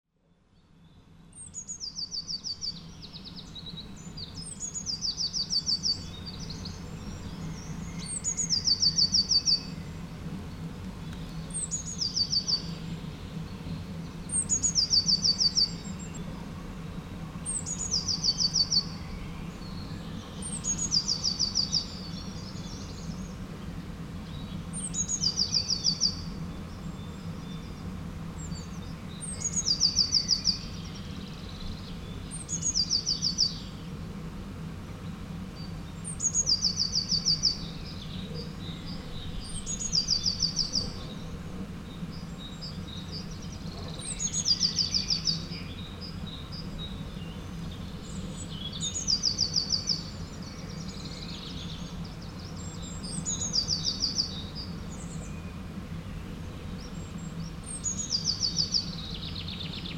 Genappe, Belgique - Rural landscape
Sound of a rural landcape from a quiet road on a sunday afternoon.